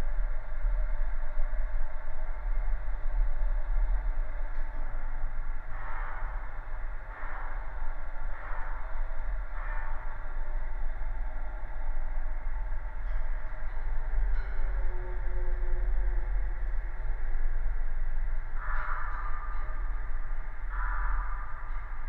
Utena, Lithuania, temporary fence
at the construction of new supermarket. the teritory surrounded by temporary metalic fence, so, after some time, this sound will disappear in reality. contact microphones on two different segment of fence creates interesting and horrifying effect